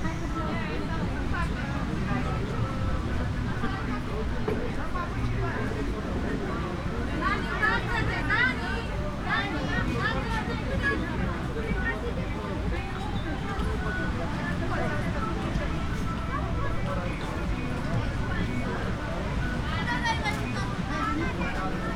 Mamaia Beach, Constanța, Romania - Small Pub on the Beach
Usually bars on the beach in Mamaia play pretty loud music occupying or rather invading the soundscape. This one was pretty tame and chill so a nice balance of sonic layers can be heard: the sea, people, low-music. Recorded on a Zoom F8 using a Superlux S502 ORTF Stereo Microphone.
Constanța, România